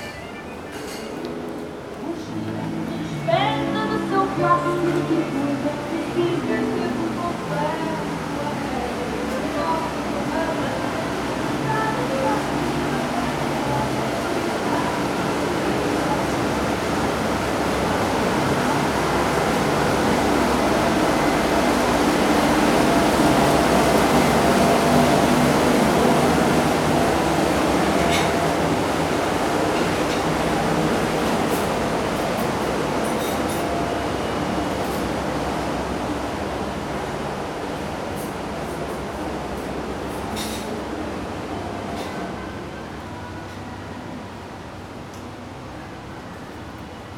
walking on the promenade. sounds of tourists enjoying the day, having food at the restaurants. walking into a passage under the buildings, passing near a window of a kitchen and a huge vent.